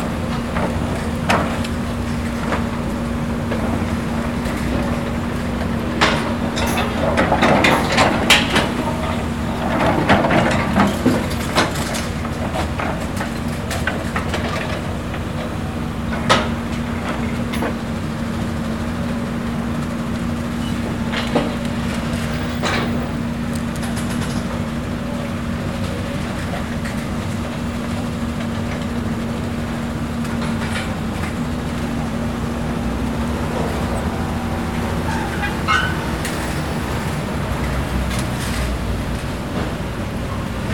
Avenue de Lyon, Toulouse, France - Teso Destroy
destruction of building, construction site, engine, destruction device, road traffic
captation : zoom h4n